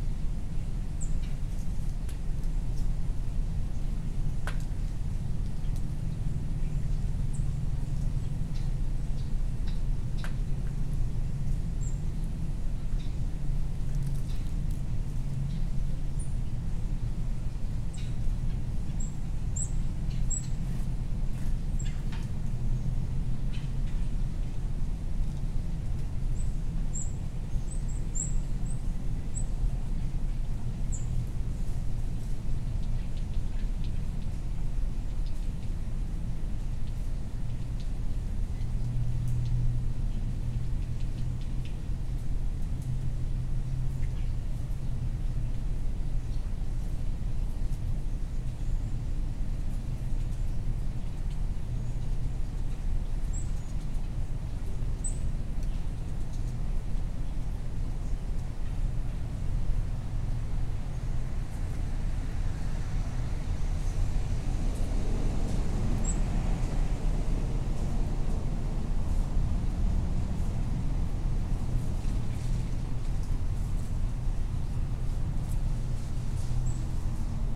Glendale Ln, Beaufort, SC, USA - Neighborhood Ambiance
A recording taken on the doorstep of a house. Many birds are heard throughout the recording. A neighbor's dog begins to bark at 05:30. Human activity is heard throughout the neighborhood, including vehicles and people talking.
[Tascam DR-100mkiii & Primo EM-272 omni mics]
South Carolina, United States